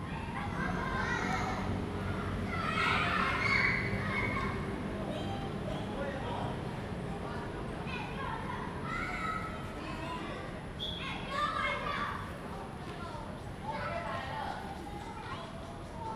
Sec., Beitou Rd., Beitou Dist., Taipei City - Traveling by train
under the track, MRT train sounds
Please turn up the volume a little., Zoom H2n MS+ XY